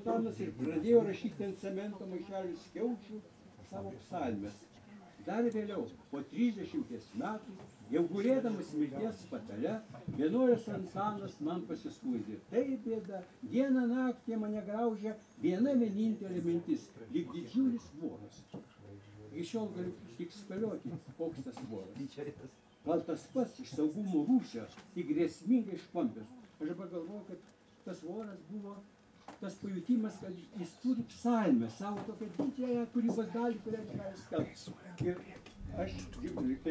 Lithuania, Juknenai, poetry event
lithuanian poet Algimantas Baltakis speaks
12 June 2011